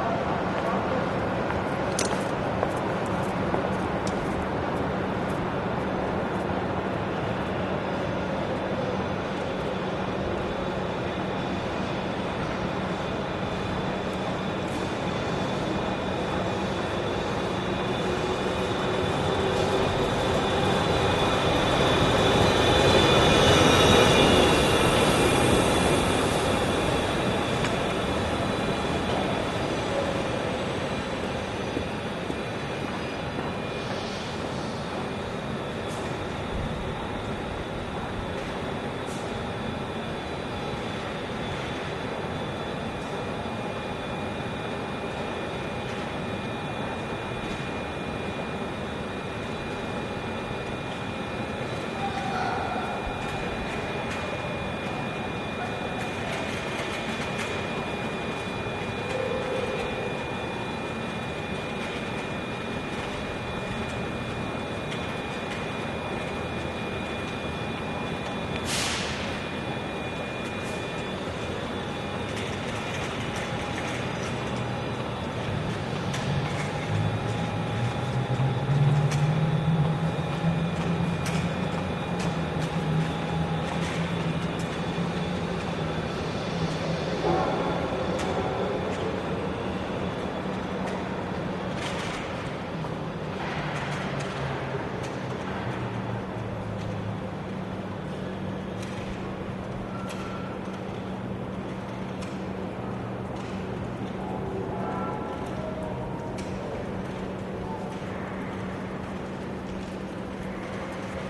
Running underneath downtown Seattle is a commuter bus tunnel, allowing Metro to bypass downtown traffic. The 1.3 mile $455 million tunnel is finished entirely in expensive Italian marble, thanks to a cozy arrangement between the contractors and city managers. It presents a reverberant sound portrait of mass transit at work.
Major elements:
* Electric busses coming and going (some switching to diesel on the way out)
* Commuters transferring on and off and between busses
* Elevator (with bell) to street level
* Loose manhole cover that everybody seems to step on
Washington, United States of America, 25 January 1999